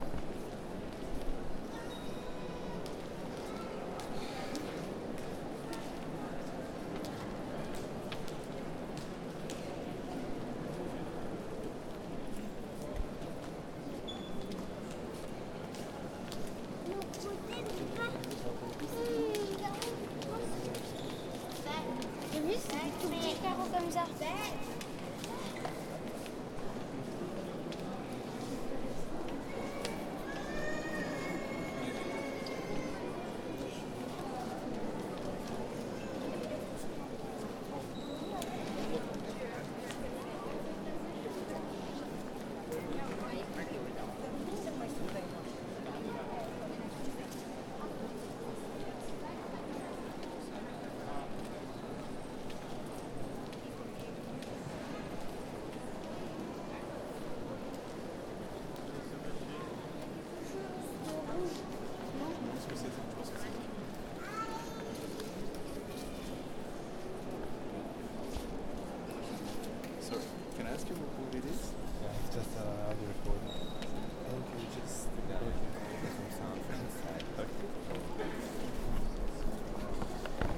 St. Peter's Basilica, Vatican. Looking to Saint Peters tomb.
St. Peter's Basilica, Vatican City. Staring at Saint Peter's tomb.